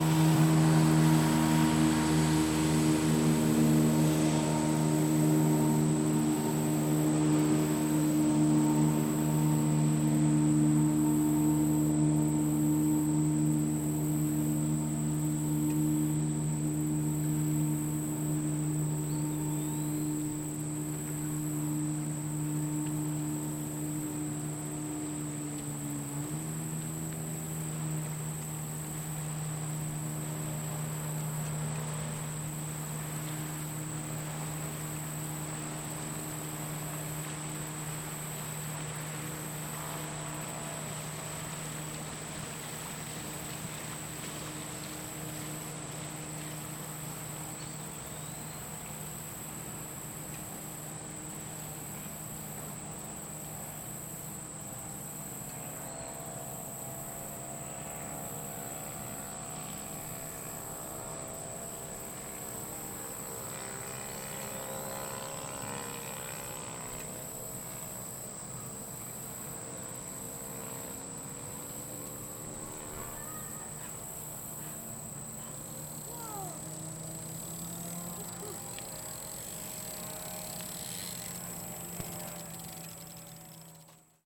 Meramec Landing Park, Valley Park, Missouri, USA - Meramec Landing Park
Afternoon at the Meramec River in Valley Park. Boat passing on river. Boat's wake on river bank. Insects and birds. R/C plane overhead. Dog grunting. Bike freewheeling. Voices.
Missouri, United States of America